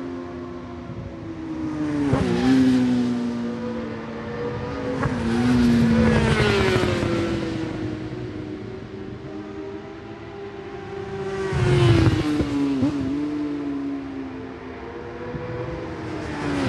Brands Hatch GP Circuit, West Kingsdown, Longfield, UK - british superbikes 2004 ... supersports ...
british superbikes 2004 ... supersport 600s qualifying one ... one point stereo mic to minidisk ...
19 June 2004, 10:21